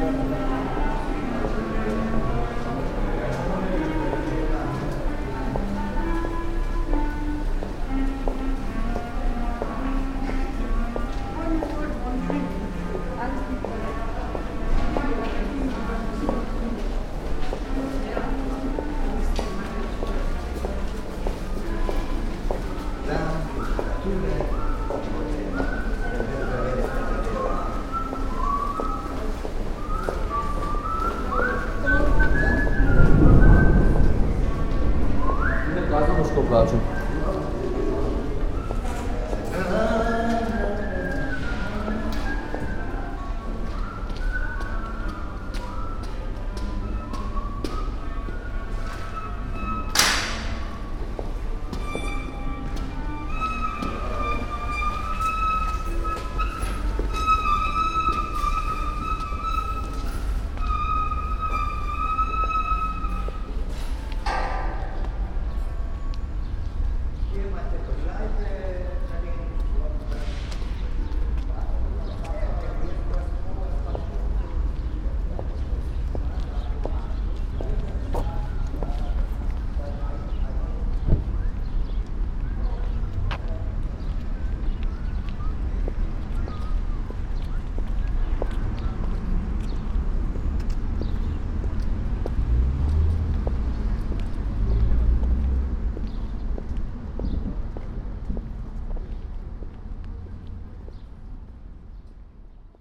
walk through the underpass, open and closed above, storm approaching, at the and with free impro of the song rain drops keep falling on my head and brakes beautifully squeaking as refrain